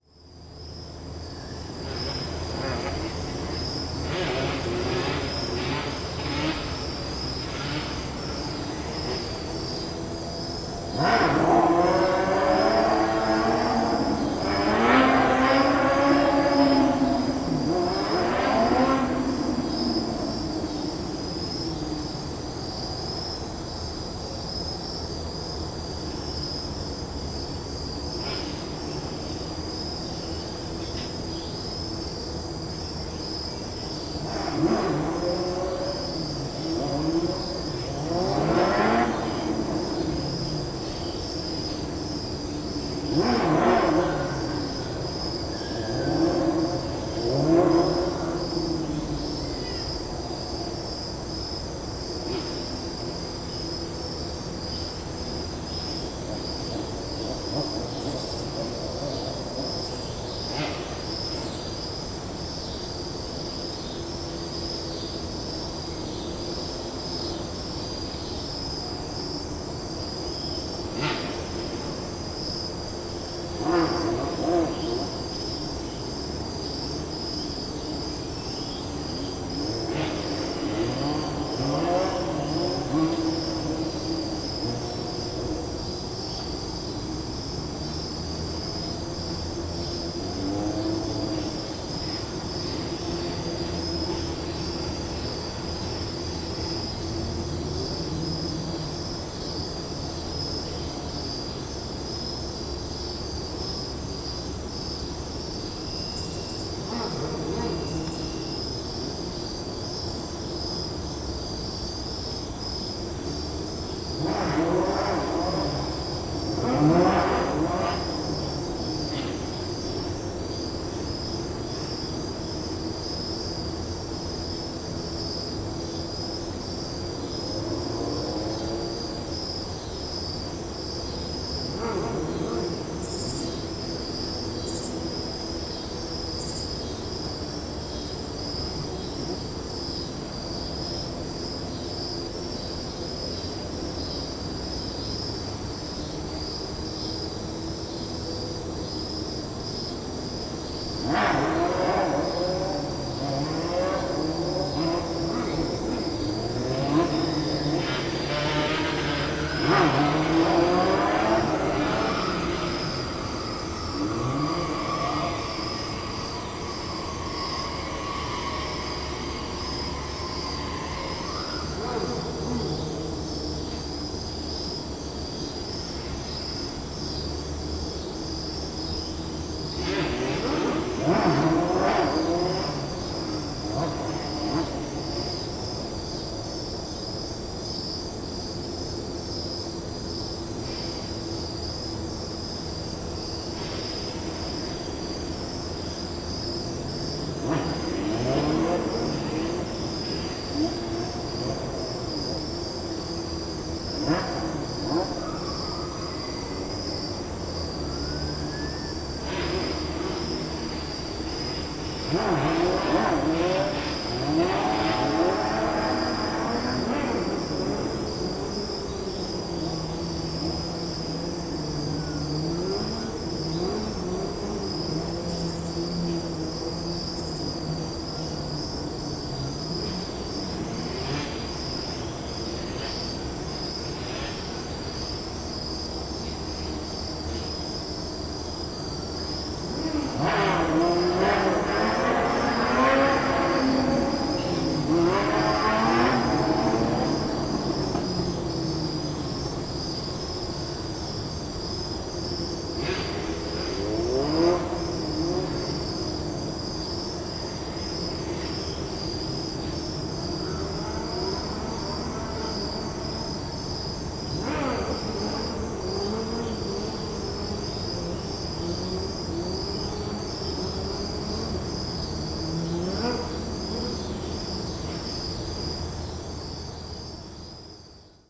Meramec Greenway Trail, Kirkwood, Missouri, USA - Parking Lot Racing
Evening recording of what sounds like auto racing in a parking lot on the other side of the Meramec River captured at the river bank just off the Meramec Greenway Trail.
Missouri, United States of America, 16 September 2020, ~19:00